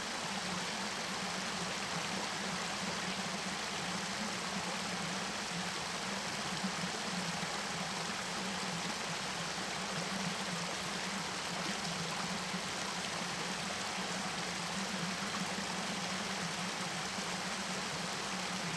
Berkeley - campus, Strawberry creek 2.
Strawberry creek running through the Berkeley campus.. I was surprised to se a number of craw fish in it...